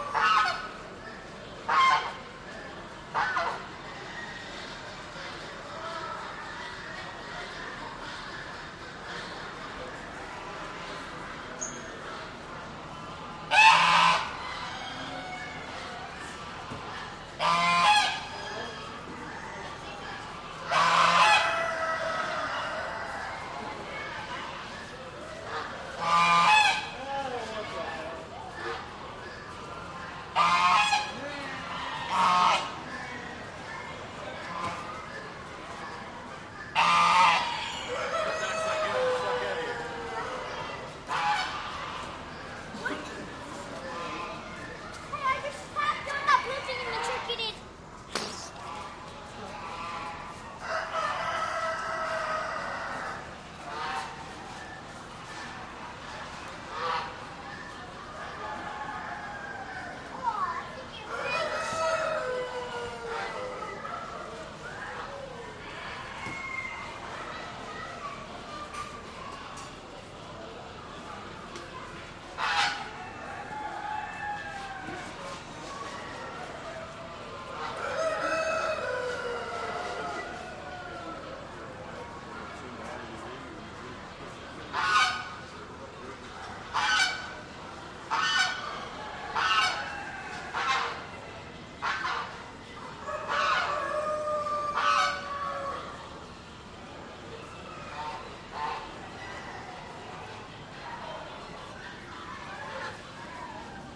Kansas State Fairgrounds, E 20th Ave, Hutchinson, KS, USA - Southeast Corner, Poultry Building
A Chinese Light Goose (Champion) and a medium Old Buff Gander (Champion) talk. Other poultry are heard in the background. Stereo mics (Audiotalaia-Primo ECM 172), recorded via Olympus LS-10.